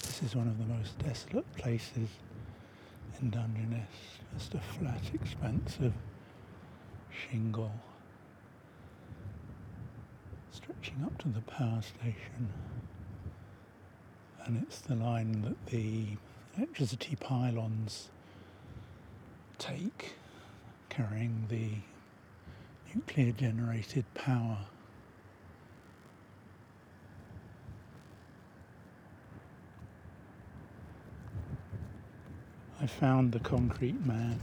The concrete man arrived about 20 years ago. Who made him and left him here is unknown and remains a mystery. He lies facedown in the desolate grey shingle, patches of organge lichen on his back and dark moss growing down his spine and under his arm.
England, United Kingdom, 24 July 2021, 15:48